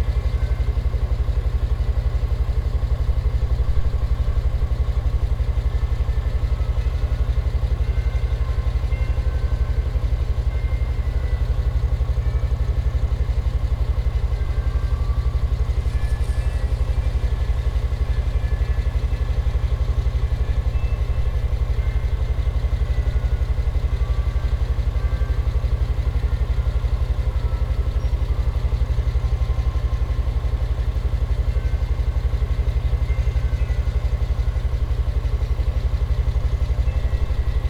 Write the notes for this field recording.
Idling semi truck in the parking lot of Double Play Pizza with holiday bells ringing. Recorded with a Tascam DR-40 Linear PCM Recorder.